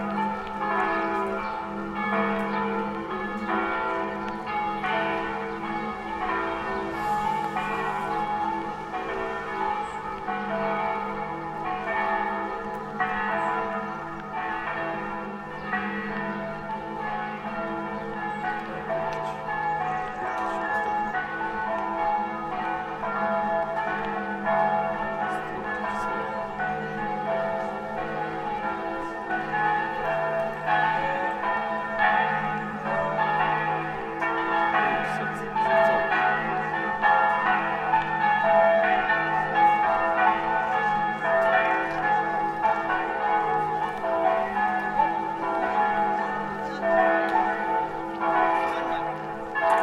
Lucca, Province of Lucca, Italy - Soundwalk
15 May 2016, ~11:00